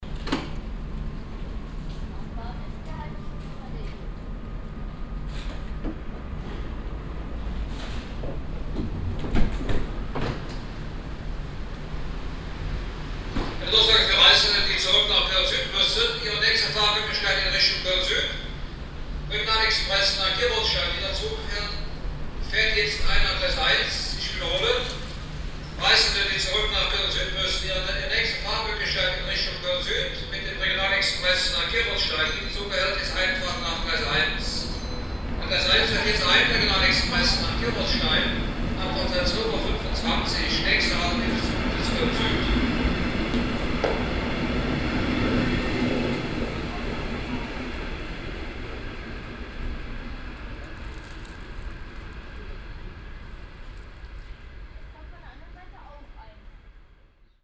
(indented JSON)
{
  "title": "cologne, stadtgarten, sbahn haltestelle",
  "date": "2008-05-02 15:47:00",
  "description": "stereofeldaufnahmen im september 07 mittags\nproject: klang raum garten/ sound in public spaces - in & outdoor nearfield recordings",
  "latitude": "50.94",
  "longitude": "6.93",
  "altitude": "55",
  "timezone": "Europe/Berlin"
}